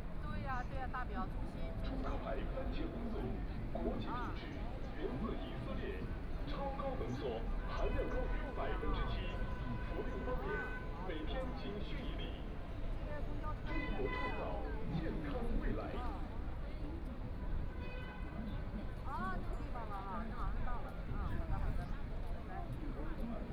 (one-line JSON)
{"title": "Putuo District, Shanghai - Square in front of the station", "date": "2013-11-23 13:50:00", "description": "The crowd, Waiting for a friend to greet the arrival of sound and conversation, TV station outside wall advertising voice, Zoom H6+ Soundman OKM II", "latitude": "31.25", "longitude": "121.45", "altitude": "5", "timezone": "Asia/Shanghai"}